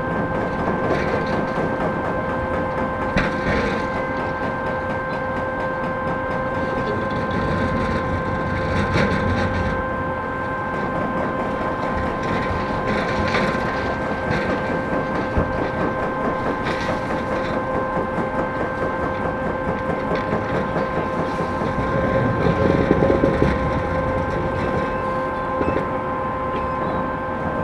berlin: sonnenallee - A100 - bauabschnitt 16 / federal motorway 100 - construction section 16: demolition of a logistics company
crane with grapple demolishes the building, excavator with mounted jackhammer demolishes building elements, fog cannon produces a curtain of micro droplets that binds dust, noise of different excavators
the motorway will pass at a distance of about 20 meters
the federal motorway 100 connects now the districts berlin mitte, charlottenburg-wilmersdorf, tempelhof-schöneberg and neukölln. the new section 16 shall link interchange neukölln with treptow and later with friedrichshain (section 17). the widening began in 2013 (originally planned for 2011) and will be finished in 2017.
sonic exploration of areas affected by the planned federal motorway a100, berlin.
february 2014